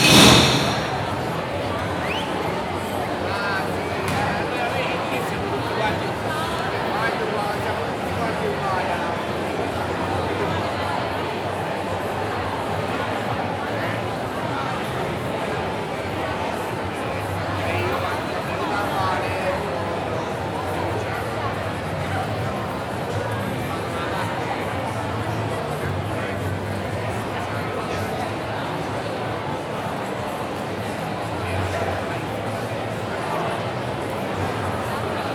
Piazza delle Vettovaglie, Pisa PI, Italien - Piazza delle Vettovaglie (22:00)
by night on the piazza delle vettovaglie. people having drinks, laughing and chatting till the last bar closes. water buckets being filled and emptied. glass shattering. air humming.